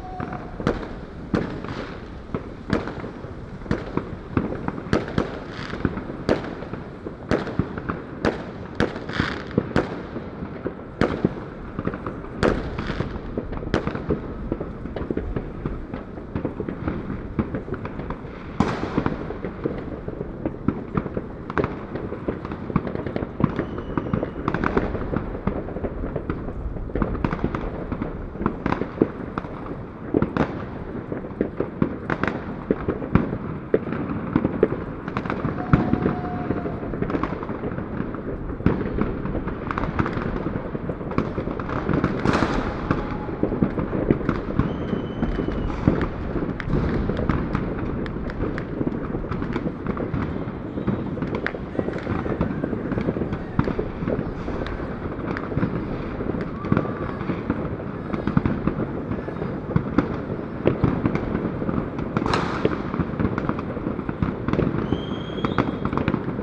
Recording from a rooftop in roughly the city center of Krakow. The excerpt starts around 3 minutes before midnight.
AB stereo recording (29cm) made with Sennheiser MKH 8020 on Sound Devices MixPre-6 II.